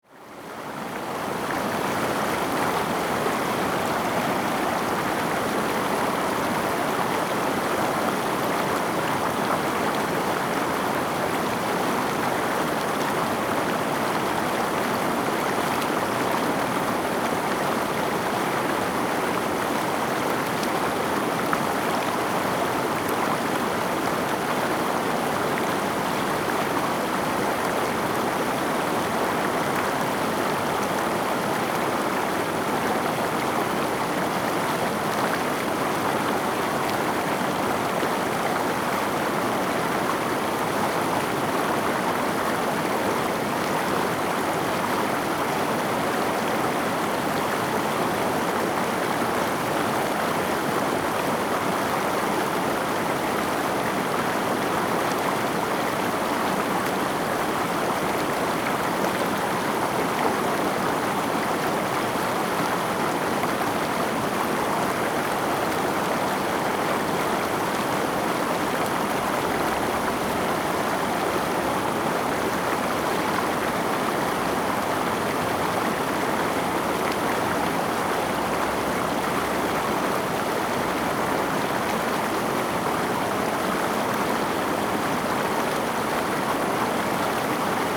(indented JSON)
{
  "title": "白鮑溪, Shoufeng Township - sound of water streams",
  "date": "2014-08-28 11:58:00",
  "description": "sound of water streams, The weather is very hot\nZoom H2n MS+ XY",
  "latitude": "23.89",
  "longitude": "121.51",
  "altitude": "74",
  "timezone": "Asia/Taipei"
}